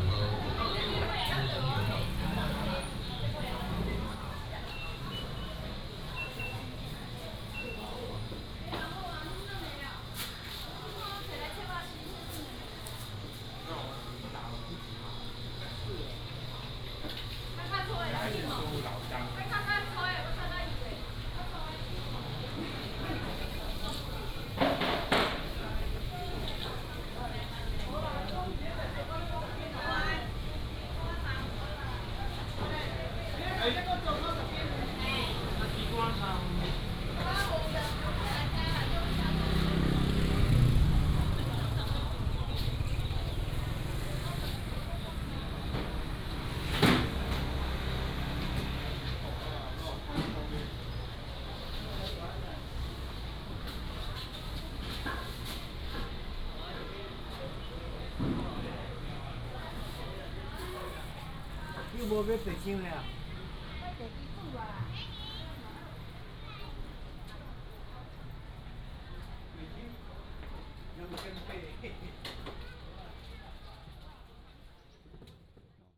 Sanmin Rd., Douliu City - Old market
Walking in the market, Sellers selling sound, Old market